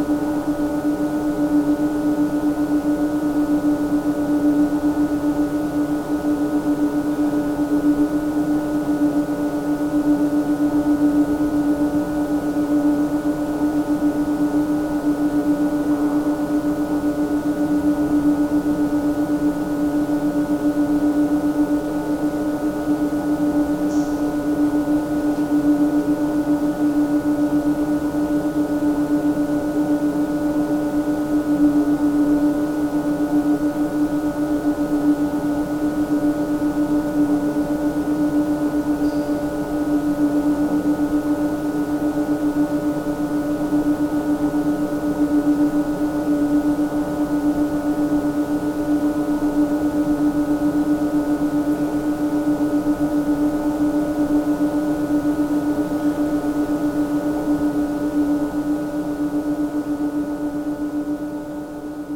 Audun-le-Tiche, France - The pumps
In Audun-Le-Tiche mine, some pumps are working, extracting water for domestic use. The sound of the pumps, very far from us, is typical. You can hear it in a large part of this mine. It's recorded here from the underground pool, the pumps are many meters above.
20 August, 11:00